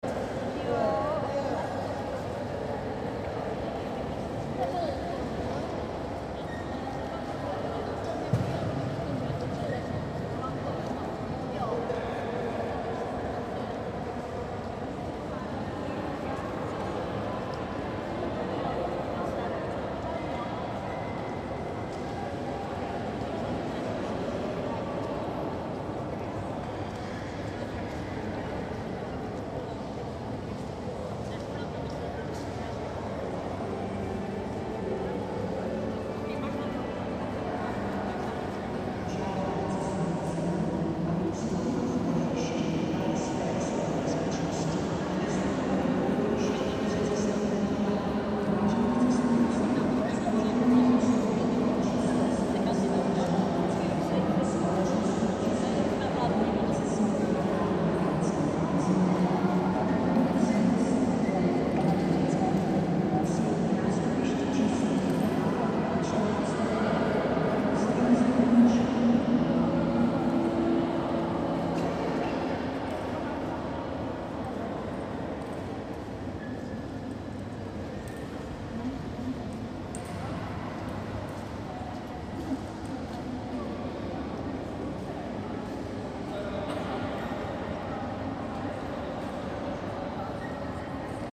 I hope that the main station hall will keep its Psychic powers even after the full scale remodel